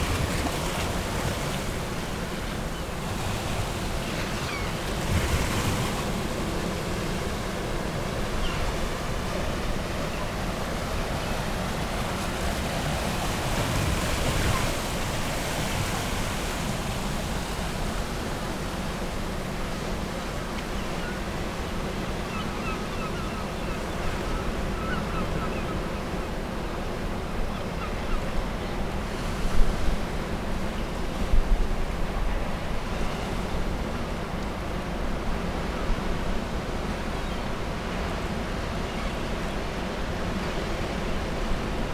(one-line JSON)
{"title": "East Lighthouse, Battery Parade, Whitby, UK - east pier ... outgoing tide ...", "date": "2019-03-08 10:10:00", "description": "east pier ... out going tide ... lavalier mics clipped to T bar on fishing landing net pole ... placed over edge of pier ... calls from herring gulls ...", "latitude": "54.49", "longitude": "-0.61", "timezone": "Europe/London"}